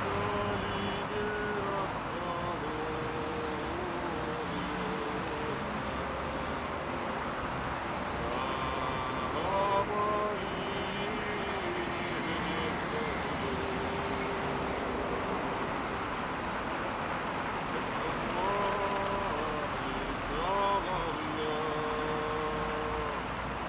Невский пр., Санкт-Петербург, Россия - Nevsky Prospect
Nevsky Prospect, December 20, recorder - zoom f4, by M.Podnebesnova